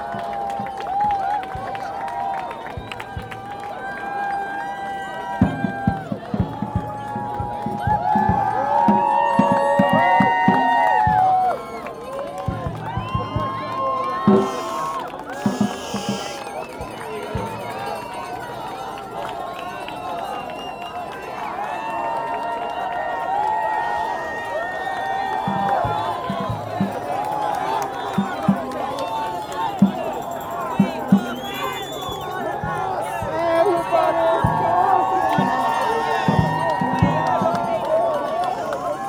The Riverfront, BFI Southbank, London, United Kingdom - Extinction Rebellion easter 2019: Crowd reacts to arrests
Every now and again police squads about 10 strong move in to make arrests of those sitting down blocking the bridge. Individual demonstrators are read their rights and if they refuse to move carried off by their arms and legs. There is no big confrontation. It is as non-violent as can be given the circumstances. The crowd chants throughout the process. Each person is cheered and clapped as they are arrested and taken to the nearby police vans. I can only watch in admiration at their commitment and determination.